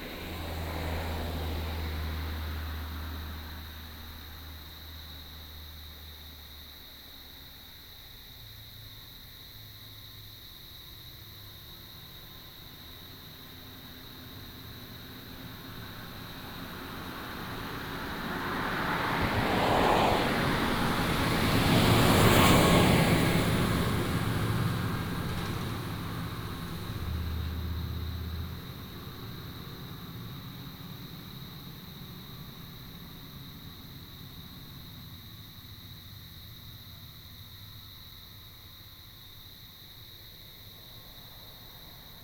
南迴公路457K, Shizi Township, Pingtung County - beside the high road
in the morning, bird sound, traffic sound, The woods beside the high road, Chicken roar, The sound of cicadas, Fly sound
Binaural recordings, Sony PCM D100+ Soundman OKM II